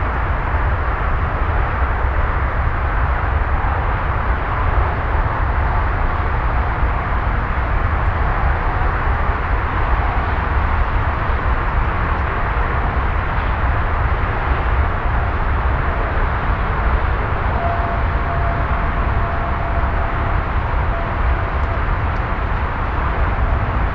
Weetfeld, Hamm, Germany - Zur Gruenen Aue 2
shortly before reaching the motorway bridge, I pause at what looks like some building site into the wetlands..., take some photographs…
kurz vor der Autobahnbrücke, eine Art Baustelle in das Feuchtgebiet hinein…, ich verweile, mache ein paar Aufnahmen…
Before due to meet some representatives of an environmental activist organization in Weetfeld, I’m out exploring the terrain, listening, taking some pictures…
Ein paar Tage vor einem Treffen mit Vertretern der “Bürgergemeinschaft gegen die Zerstörung der Weetfelder Landschaft”, fahre ich raus, erkunde etwas das Terrain, höre zu, mache ein paar Fotos…
“Citisen Association Against the Destruction of the Environment”
(Bürgergemeinschaft gegen die Zerstörung der Weetfelder Landschaft)